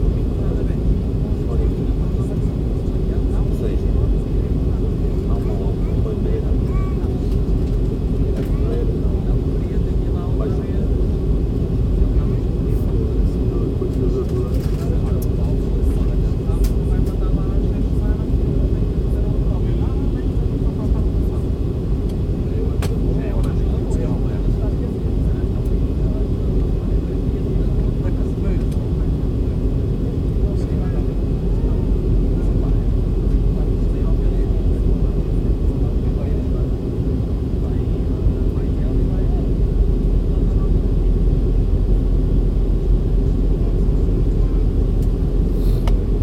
Stansted Mountfitchet, UK - Plane descending and landing at Stansted Airport
Recorded inside a plane descending on London Stansted Airport.